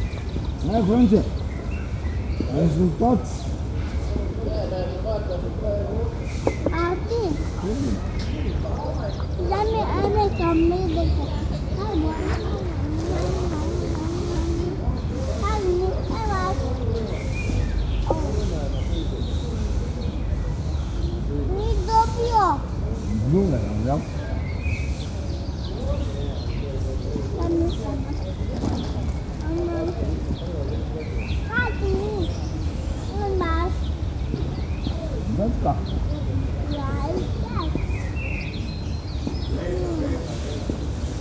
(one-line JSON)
{"title": "petanque ground at the city stadium, Maribor, Slovenia - preparing the grounds", "date": "2012-06-14 16:11:00", "description": "old men with rakes prepare the gravel surface of the petanque grounds, tennis is player out of view just over the fence, and a small child converses with his parents.", "latitude": "46.56", "longitude": "15.64", "altitude": "278", "timezone": "Europe/Ljubljana"}